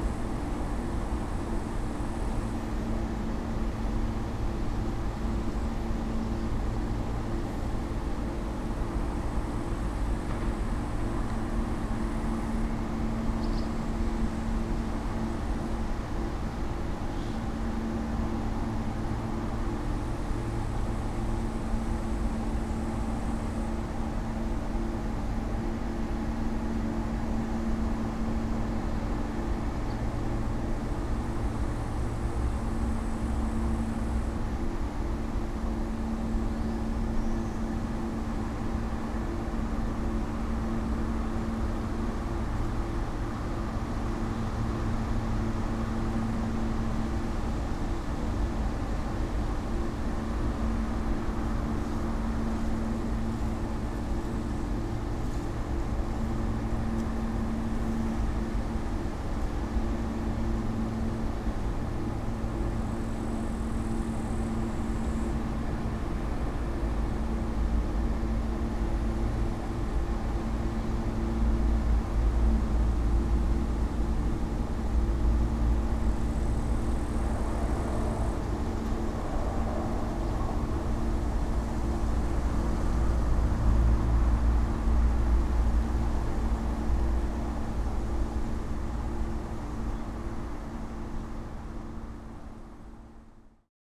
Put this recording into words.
space inside abandoned hangar. constant drone is from the near factory